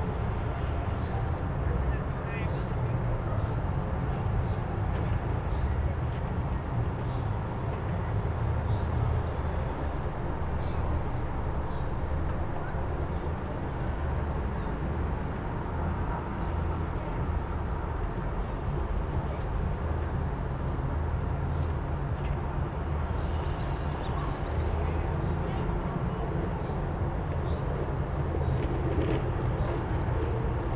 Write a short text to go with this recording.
first sunny, spring day. ambience around the apartment buildings. kids playing, small planes flying by, increased bird activity. a construction site emerged close to the housing estate. new buildings are being build. you can her the excavators and big trucks working. (roland r-07)